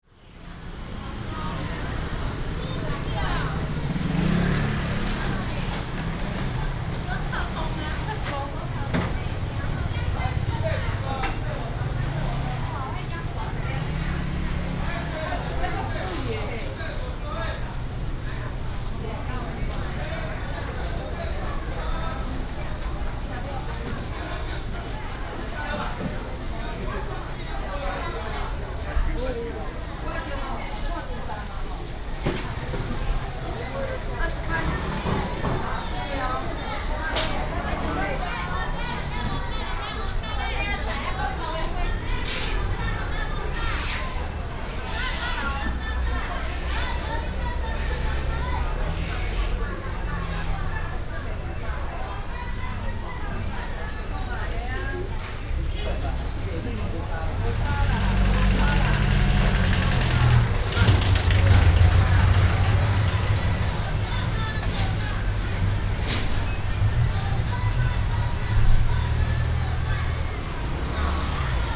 豐原果菜批發市場, Taichung City - Vegetables and fruits wholesale market
Walking in the Vegetables and fruits wholesale market, Binaural recordings, Sony PCM D100+ Soundman OKM II